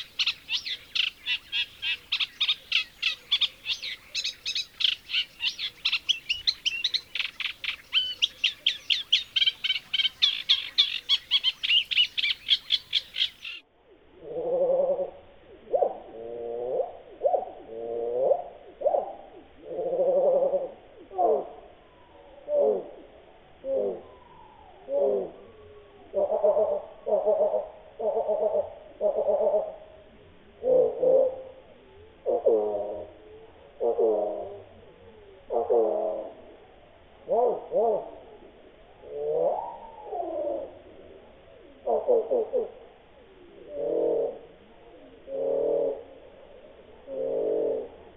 Eurasian Reed Warbler in Alam-Pedja nature reserve
First song in natural speed, then slowed down 6 times.
Tartu maakond, Eesti